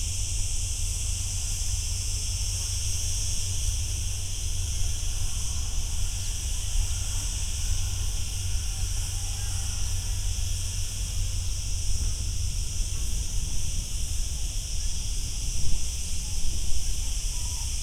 Campsite 3 - Ahrax tar-Ramel, Triq Dahlet Ix-Xmajjar, Mellieha, Malta - crickets
passing by one of the most forested areas I saw on Malta. Lots of crickets occupying trees and bushes. (roland r-07)
Tramuntana, Malta, September 2020